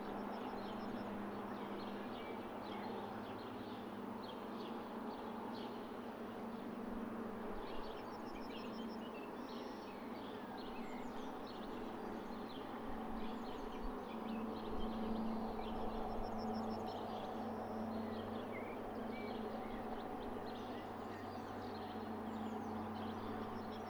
Early afternoon on a very hot day. Recorded with an Olympus LS 12 Recorder using the buit in microphones. Microphones facing downstream. A couple of cars passing by. A small aircraft passes overhead. Members of the resident colony of House Martins (Delichon urbicum) can be heard, also ducks and a marsh warbler and other birds from the river and the trees on the riverbanks. Some fish making soft splashing sounds.